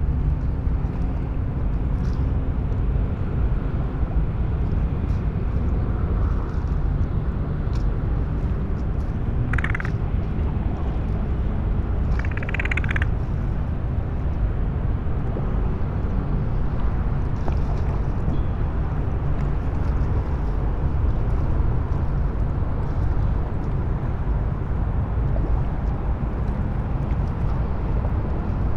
molo Audace, Trieste, Italy - sea gull
morning sea hearers / seerers
project ”silent spaces”